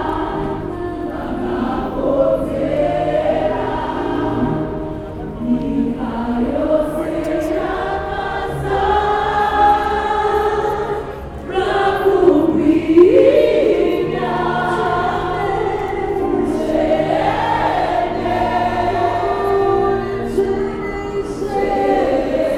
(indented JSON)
{
  "title": "Park Lane, Harare, Zimbabwe - City Presbyterian Church Harare",
  "date": "2012-10-04 18:40:00",
  "description": "Its almost 7 and I’m rushing for my combi taxi on the other side of Harare Gardens… as I turn the corner into Park Lane, past the National Gallery, gospel sounds are filling the street. All windows and doors are open on the building opposite the hotel… I linger and listen… and I’m not the only one…",
  "latitude": "-17.83",
  "longitude": "31.05",
  "altitude": "1487",
  "timezone": "Africa/Harare"
}